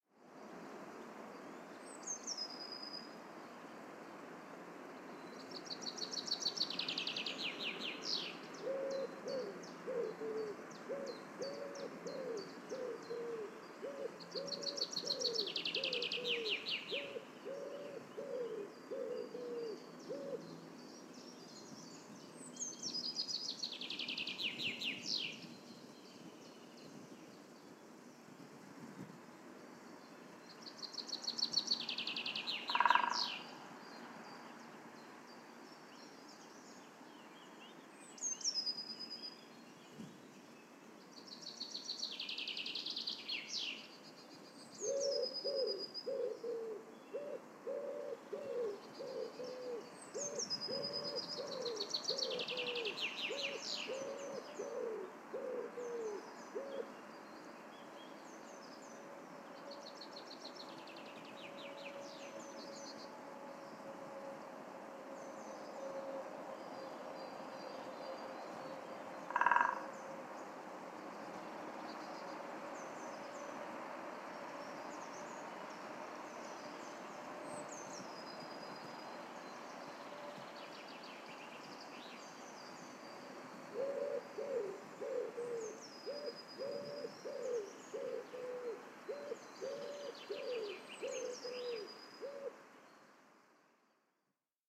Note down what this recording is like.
A Wonderful afternoon in the Ohrberg Park today and birds singing in their spring style. Many birds were in the park showing us their hapiness, so I was there to document ed them with my recorder. Tascam DR100-MKIII Handheld Recorder, MikroUSI Omni directional Stereo Matched Microphones